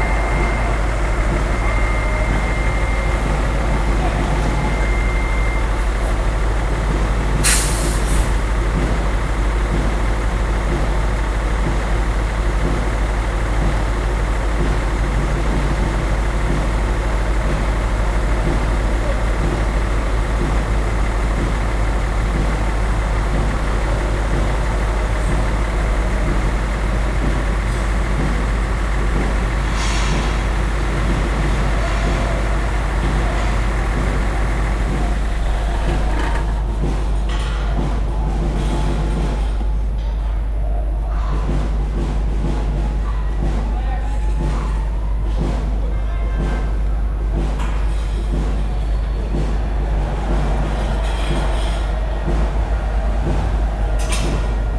{"title": "Kallang, Singapur, Horne rd. - drone log 16/02/2013 horne rd. stadium", "date": "2013-02-16 22:21:00", "description": "horne road stadium, engine and soccer game\n(zoom h2, binaural)", "latitude": "1.31", "longitude": "103.86", "altitude": "7", "timezone": "Asia/Singapore"}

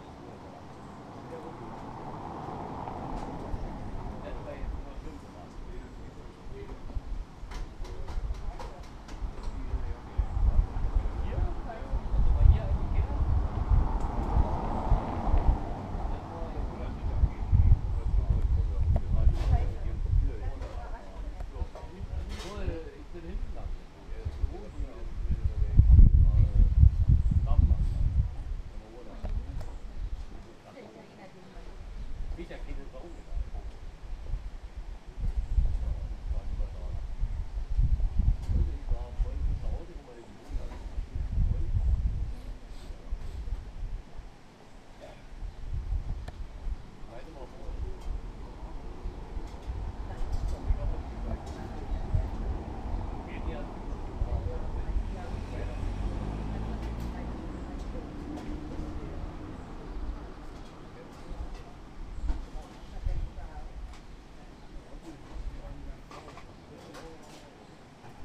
{"title": "Buckow (Märkische Schweiz), Deutschland - Having theirs", "date": "2013-06-30 14:30:00", "description": "Whilst we had our coffe & cake, the two drunkards behind us had theirs. All in lovely sunshine, after a beautiful trip around the Märkische Schweiz.", "latitude": "52.57", "longitude": "14.07", "altitude": "27", "timezone": "Europe/Berlin"}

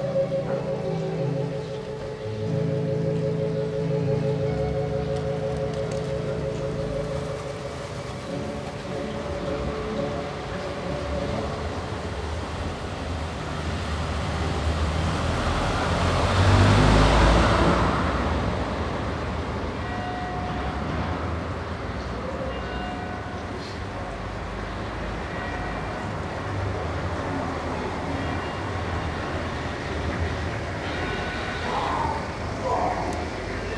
{"title": "Saint-Paul-Trois-Châteaux, France - Neighbour with two chords", "date": "1993-08-10 09:50:00", "description": "Neighbour playing organ, trucks, children, birds.\nSony MS microphone. DAT recorder.", "latitude": "44.35", "longitude": "4.77", "altitude": "95", "timezone": "Europe/Paris"}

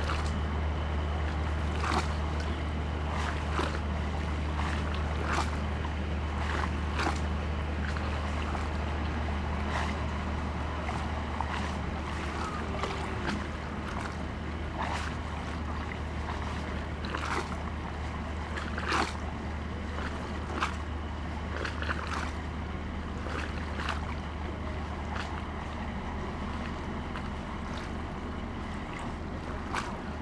{"title": "berlin, grünau: dahme river - the city, the country & me: river bank", "date": "2015-10-03 18:40:00", "description": "dahme river bank, lapping waves\nthe city, the country & me: october 3, 2015", "latitude": "52.41", "longitude": "13.62", "altitude": "36", "timezone": "Europe/Berlin"}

